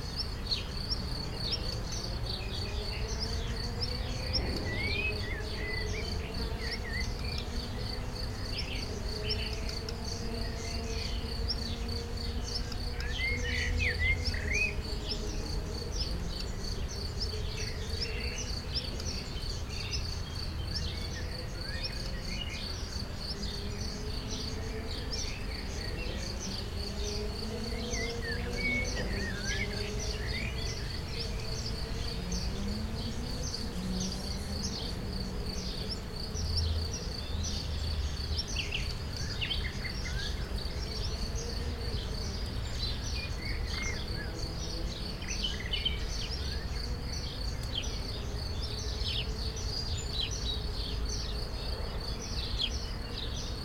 Rue de Vars, Chindrieux, France - Rallye lointain
Dans la campagne à Chindrieux, la cloche sonne, grillons, oiseaux et rallye de Chautagne en arrière plan.